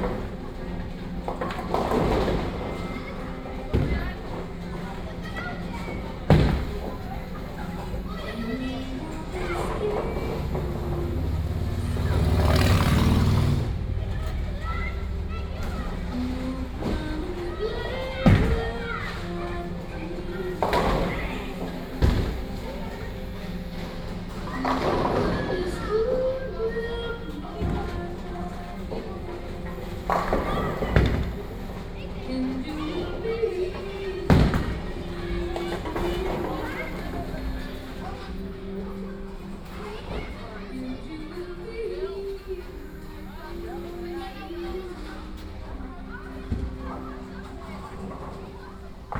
entree bowlingcentrum
entree bowling centre